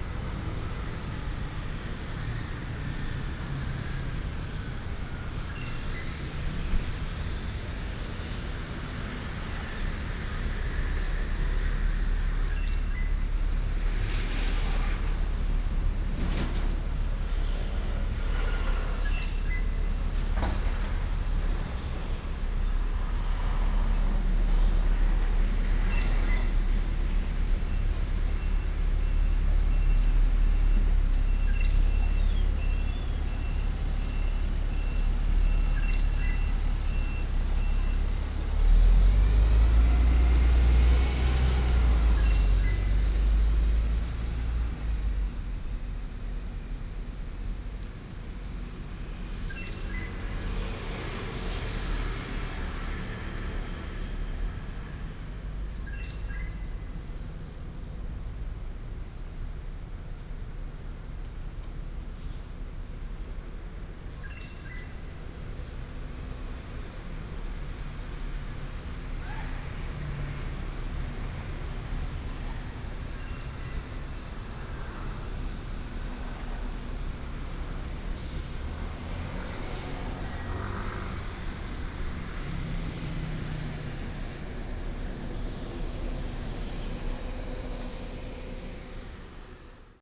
蘆竹區公所站, Luzhu Dist., Taoyuan City - At the bus station
At the bus station, Birds sound, traffic sound